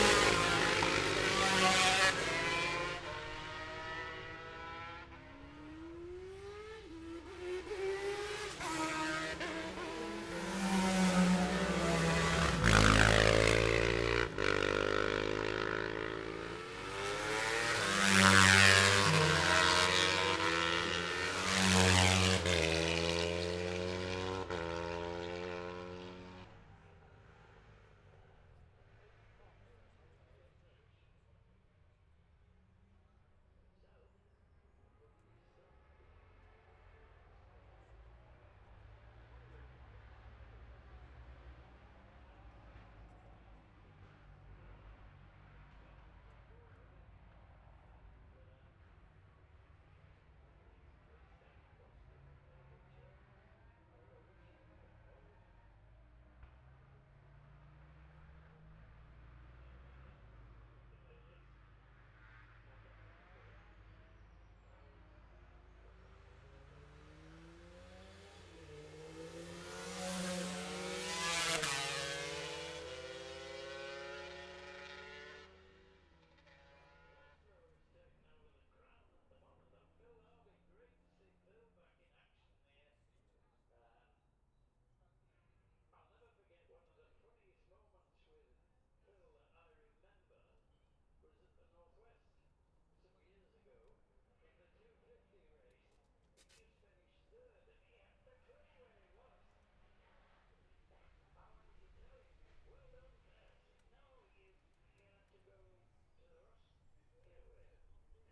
Jacksons Ln, Scarborough, UK - olivers mount road racing ... 2021 ...
bob smith spring cup ... ultra-light weights practice... dpa 4060s to MIxPre3 ...